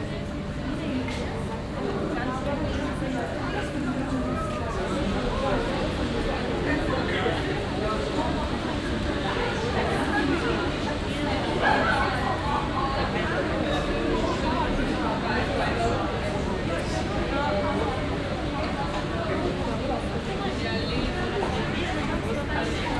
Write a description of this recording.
At the subway station, Berliner Platz. The sound of peoples voices and steps on the gate way. Then two trams access the station, people enter and the trams leave. An der U- bahn Station Berliner Platz. Der Klang der Stimmen von Menschen und Schritten auf den Bahnsteigen. Dann die Ankunft von zwei Bahnen. Menschen gehen in die Bahnen. Abfahrt. Projekt - Stadtklang//: Hörorte - topographic field recordings and social ambiences